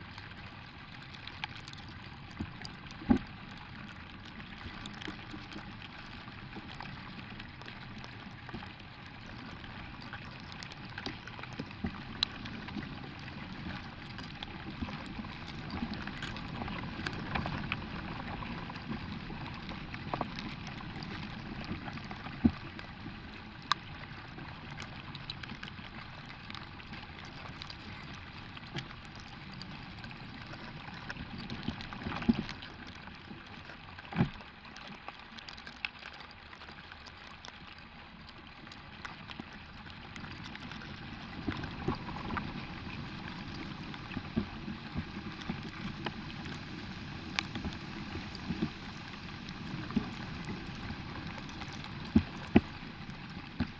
Šlavantai, Lithuania - Ants marching along a twig
Dual contact microphone recording of a twig, with a line of ants marching along back and forth. Impact of wind pressure can also be heard.